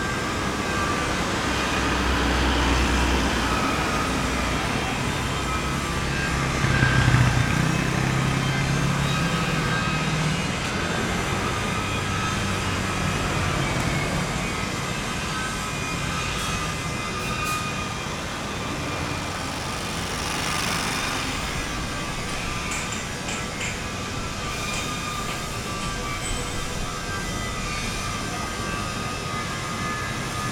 Zhongxing N. St., Sanchong Dist., New Taipei City - Outside the factory
the voice of the factory, Traffic Sound
Zoom H4n +Rode NT4
Sanchong District, New Taipei City, Taiwan, February 2012